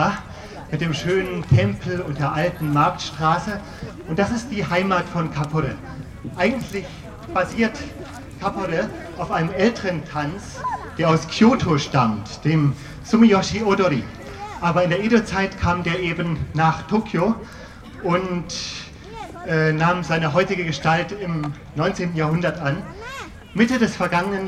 düsseldorf, eko-haus, japanisches sommerfest - japanisches sommerfest, kappore tanz, juli 2003
26 July, 16:30